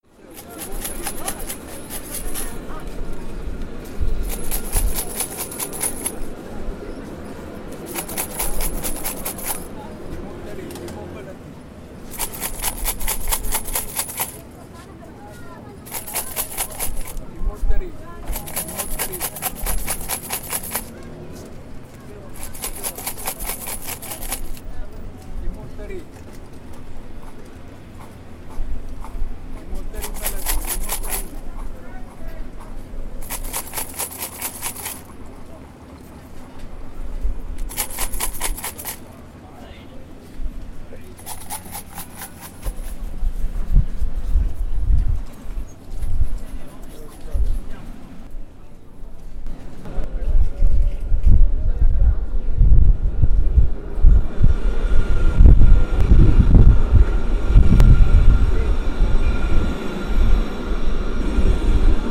Jerusalem, Israel - Money box of beggar
Female beggar shakes her coins' box at Mahane Yehuda central market next to Jaffa street where the light train appears every few minutes.